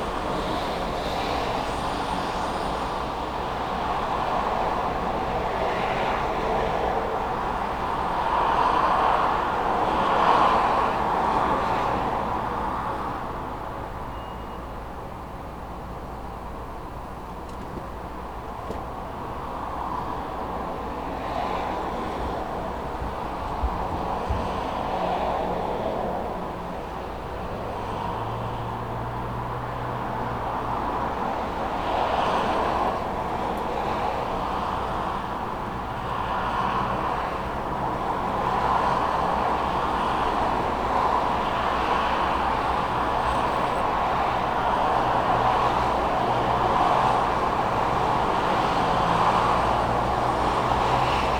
Goss - Grove, Boulder, CO, USA - Roadside Balcony
5 February 2013, 18:00